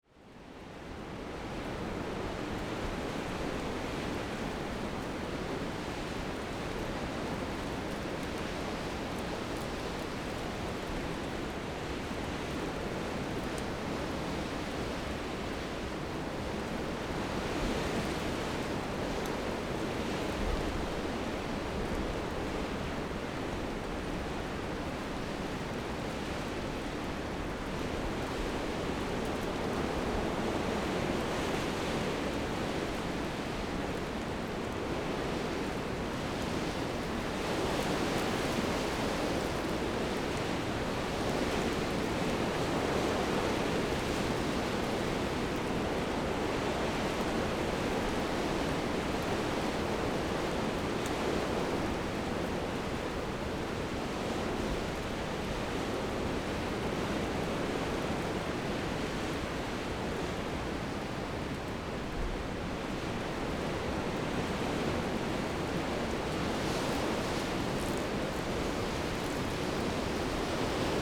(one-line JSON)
{"title": "Xiyu Township, Penghu County - the wind and the trees", "date": "2014-10-22 14:14:00", "description": "The sound of the wind and the trees\nZoom H2n MS+XY", "latitude": "23.57", "longitude": "119.51", "altitude": "48", "timezone": "Asia/Taipei"}